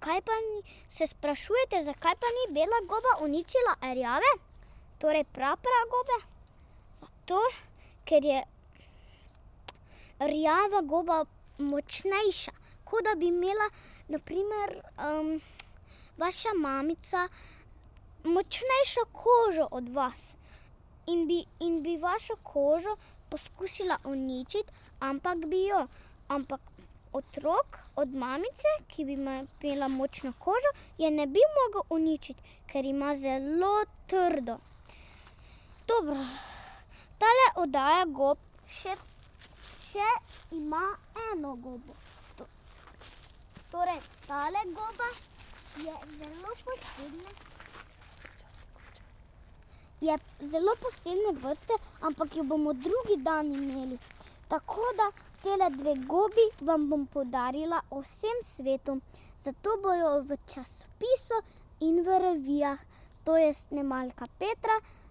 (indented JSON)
{
  "title": "Trije ribniki, Podova, Slovenia - lecture: on mushrooms",
  "date": "2012-11-11 16:01:00",
  "description": "short lecture on mushrooms while walking on a path through reeds",
  "latitude": "46.43",
  "longitude": "15.67",
  "altitude": "267",
  "timezone": "Europe/Ljubljana"
}